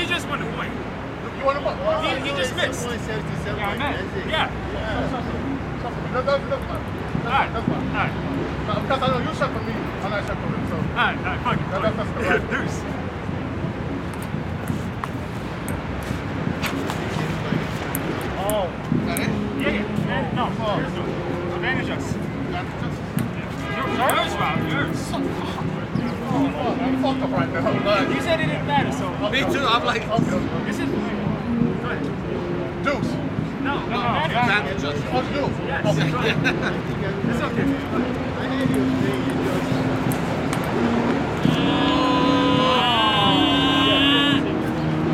W 42nd St, New York, NY, USA - Ping Pong at Bryant Park
A ping pong match at Bryant Park.